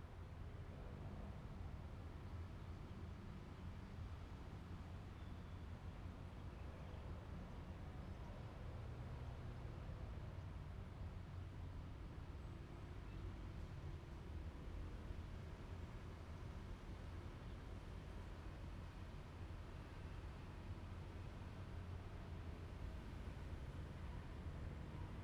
Fuqian Rd., Miaoli City - Next to the tracks
The train runs through, Next to the tracks, Bird sound, Traffic sound
Zoom H2n MS+XY +Spatial audio
Miaoli City, Miaoli County, Taiwan, 22 March 2017